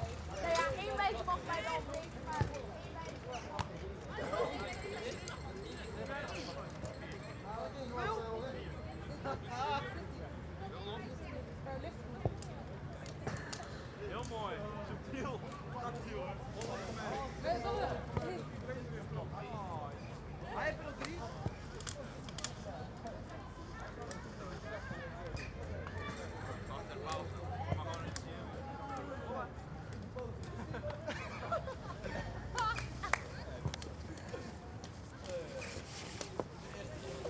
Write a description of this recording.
People playing soccer on public sports fields in Schiehaven, Rotterdam. Recorded with Zoom H2 internal mics.